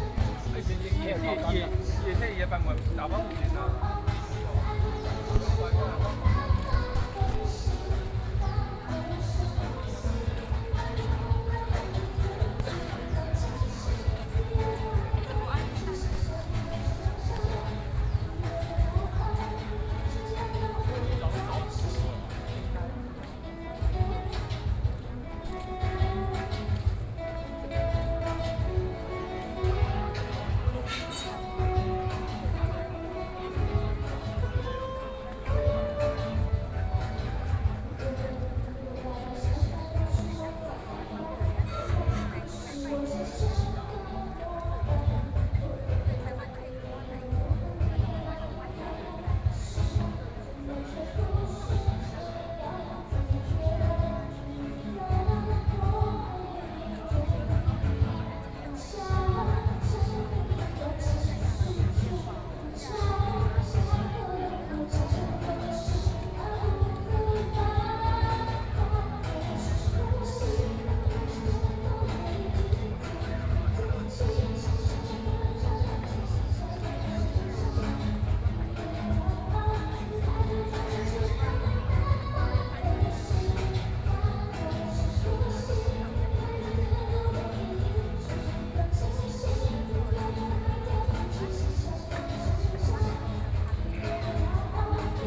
Legislature, Taipei - Protest party
Protest party, A young soldier deaths, Zoom H4n+ Soundman OKM II
中正區 (Zhongzheng), 台北市 (Taipei City), 中華民國